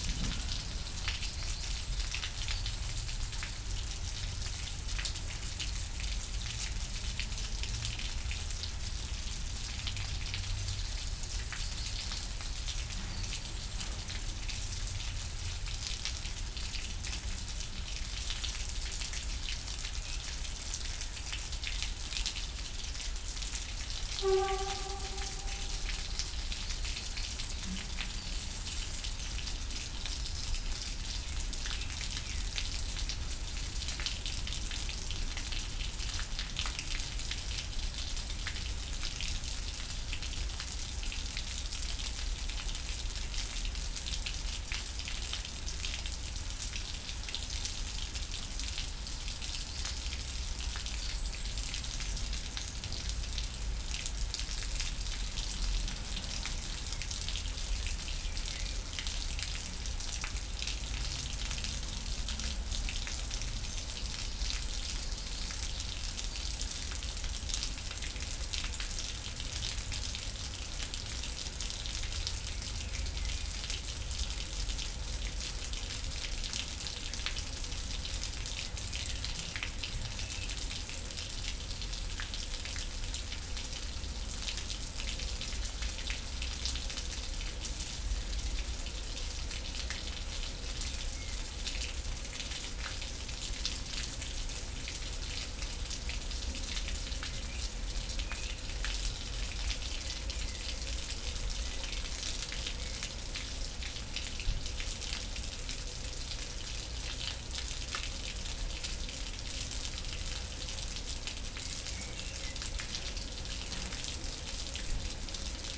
The river Alzette was covered in the 1910s in order to create a new city center on top of it. 100m west the river comes out from the underground, flowing in a concrete canal. Water inflow from a nearby pond.
(Sony PCM D50, Primo EM272)